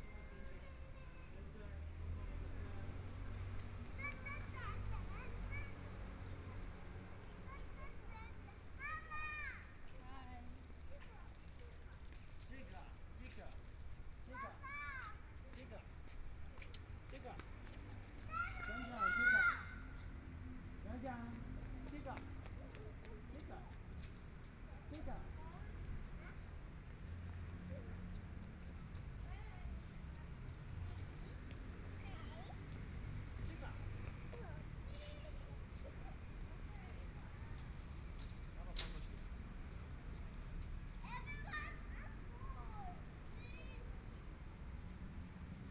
JinZhou Park, Taipei City - in the Park
Sitting in the park, Environmental sounds, Parents and kids, Binaural recordings, Zoom H4n+ Soundman OKM II
Taipei City, Taiwan, 8 February 2014